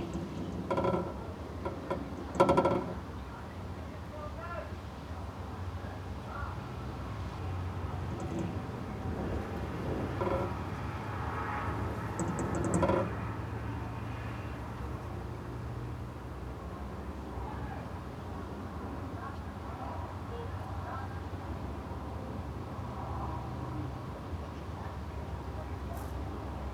{"title": "Vobkent, Uzbekistan, wind rattling a window - wind rattling a window", "date": "2009-08-18 12:30:00", "description": "recorded in the shrine of Khwaja `Ali ar-Ramitani while in the background locals prepare for the weekly market", "latitude": "40.06", "longitude": "64.49", "timezone": "Asia/Samarkand"}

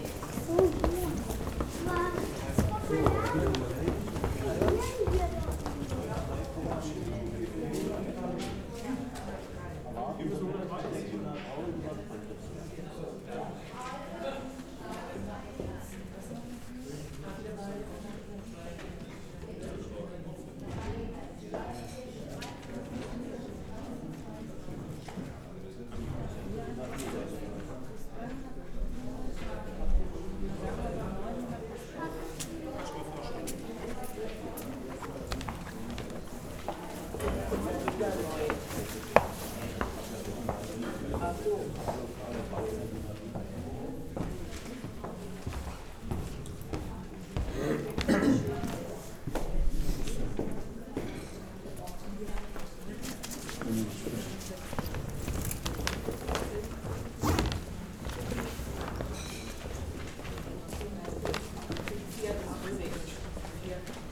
{"title": "berlin, rütlistraße: rütli-schule - the city, the country & me: rütli school", "date": "2011-02-13 17:37:00", "description": "polling place in a classroom of the famous rütli school\nthe city, the country & me: february 13, 2011", "latitude": "52.49", "longitude": "13.43", "altitude": "39", "timezone": "Europe/Berlin"}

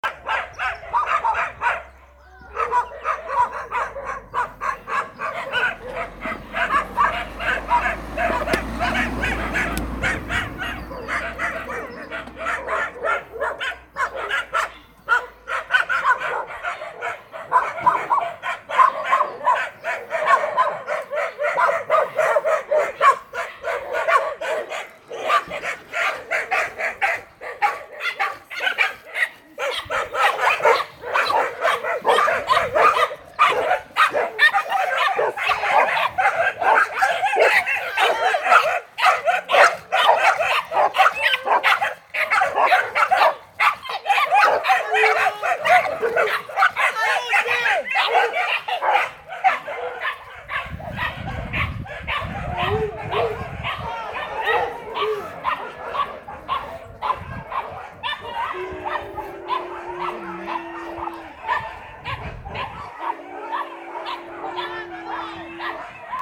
{"title": "Chemin Des Filaos, Réunion - 20181217 180550 chiens", "date": "2018-12-17 18:05:00", "description": "À Cilaos on s'entoure de chiens, ça commence à être une sérieuse nuisance sanitaire: les chiennes ont souvent des chiots attendrissant qu'on garde par pitié, mais qu'on peine à assumer et il est courant aussi que des gens du littoral viennent abandonner leurs chiens ici. De plus un sérieux manque affectif généralisé ou la peur d'agression incite à s'entourer de chiens. La police municipale en retire plusieurs dizaines par mois qui errent rien qu'à CILAOS, mais il y en a de plus en plus chez les particuliers. Comme on attache et enferme les chiens pour pas qu'ils divaguent et soit ramassés par la police, ils hurlent encore plus. Nous avons fais des gros frais d'isolation phonique pour ça, et pour les hélicoptères le matin. Pour les chiens trop proche, l'isolation phonique ne suffit pas: il faut encore des boules dans les oreilles pour dormir. Heureusement, l'altitude tempère la température estivale.", "latitude": "-21.14", "longitude": "55.47", "altitude": "1193", "timezone": "Indian/Reunion"}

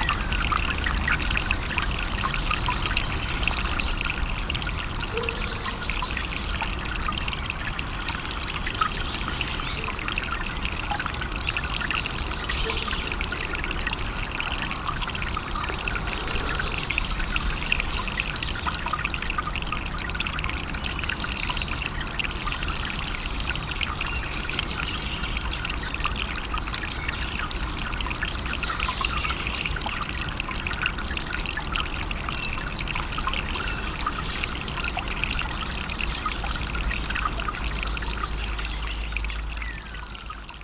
{"title": "ratingen, schwarzbachtal, hackenbergweg, kleiner b - ratingen, schwarzbachtal, hackenbergweg, kleiner bach", "description": "kleiner bach am waldrand, morgens, im hintergrund ein bellender hund + hund an kette\n- soundmap nrw\nproject: social ambiences/ listen to the people - in & outdoor nearfield recordings", "latitude": "51.28", "longitude": "6.89", "altitude": "84", "timezone": "GMT+1"}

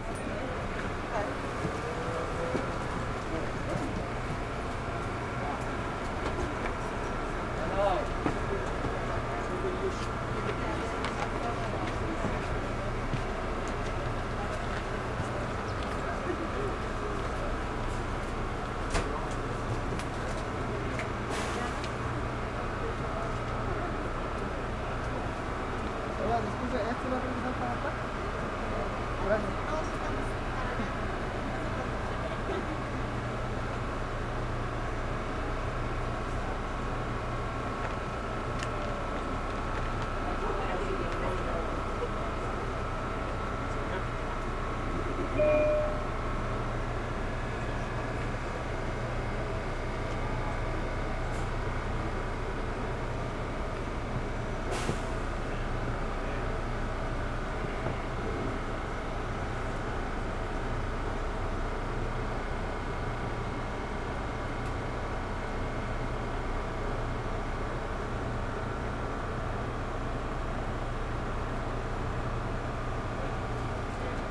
{"title": "Delancey St · Essex St, New York, NY, USA - Late-night commute, NYC", "date": "2022-03-07 23:13:00", "description": "Late-night commuters waiting for the J train to start moving again after line disruptions.\nRecorded at Delancey Street/Essex Street station.", "latitude": "40.72", "longitude": "-73.99", "altitude": "10", "timezone": "America/New_York"}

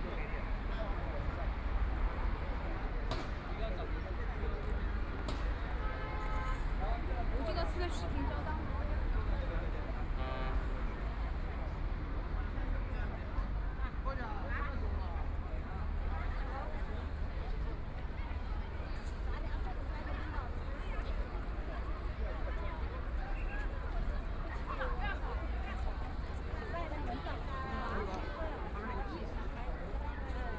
{"title": "East Zhongshan Road, Shanghai - At the intersection", "date": "2013-11-23 18:16:00", "description": "At the intersection, Traffic Sound, Bell tower, Ship's whistle sound, Very many people and tourists, Binaural recording, Zoom H6+ Soundman OKM II", "latitude": "31.24", "longitude": "121.49", "altitude": "28", "timezone": "Asia/Shanghai"}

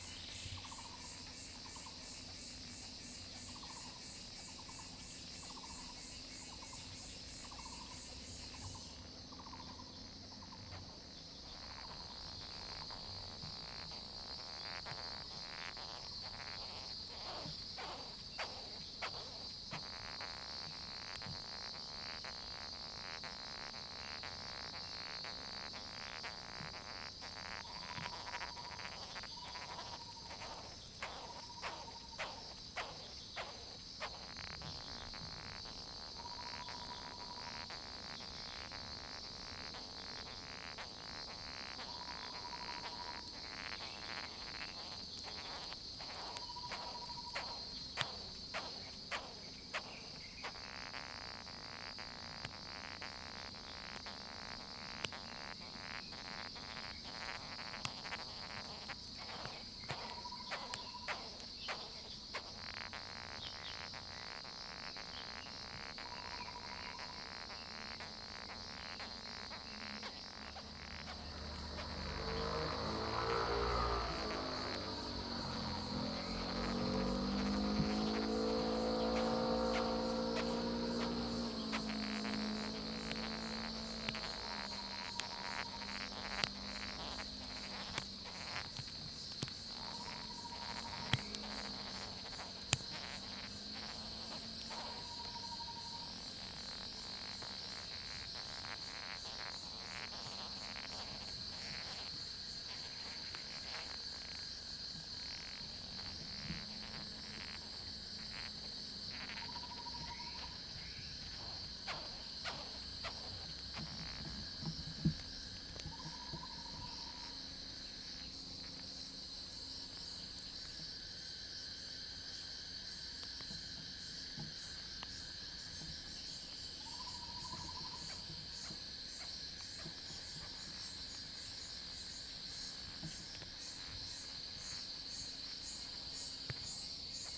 {"title": "種瓜路, 埔里鎮桃米里 - Japanese rhinoceros beetle", "date": "2016-07-13 07:11:00", "description": "Japanese rhinoceros beetle\nZoom H2n MS+ XY", "latitude": "23.95", "longitude": "120.92", "altitude": "574", "timezone": "Asia/Taipei"}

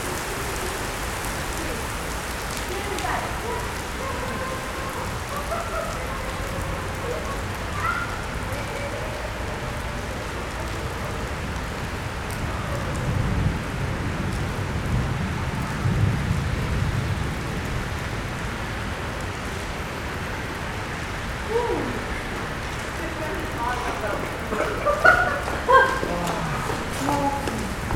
{"title": "Library, Nova Gorica, Slovenia - People gathering infront the the Library", "date": "2017-06-06 17:05:00", "description": "People gathering in front the covered entrance of the Library at the beginning of the rain.", "latitude": "45.96", "longitude": "13.65", "altitude": "100", "timezone": "Europe/Ljubljana"}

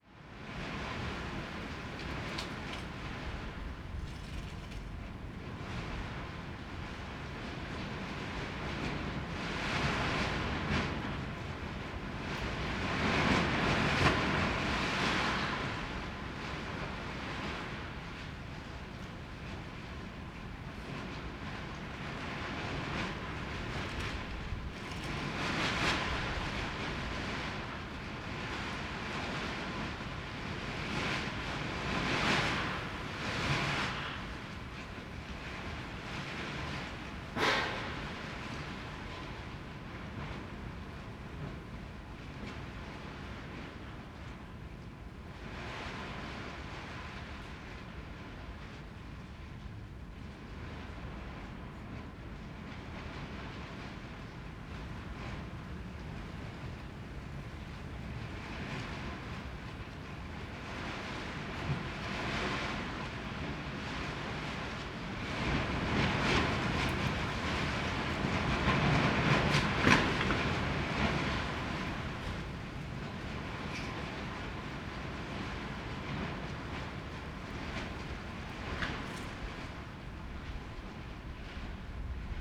{"title": "Berlin Bürknerstr., backyard window - night, wind in tarp", "date": "2015-02-16 01:05:00", "description": "night in the backyard, wind, sound of a rattling tarp\n(Sony PCM D50, Primo EM172)", "latitude": "52.49", "longitude": "13.42", "altitude": "45", "timezone": "Europe/Berlin"}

